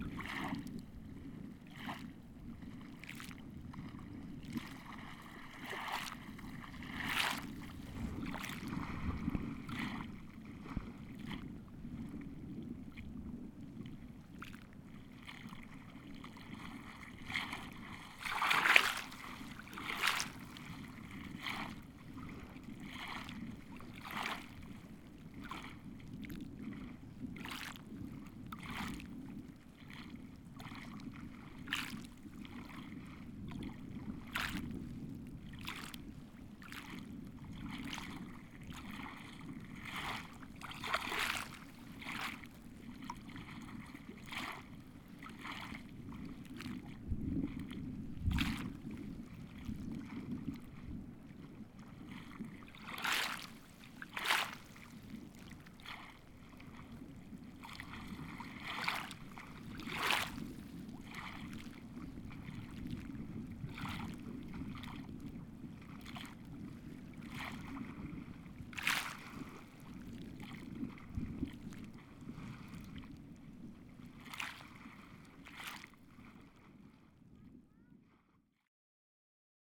microphones on the ground of lakeshore: small omni and geophone with spike...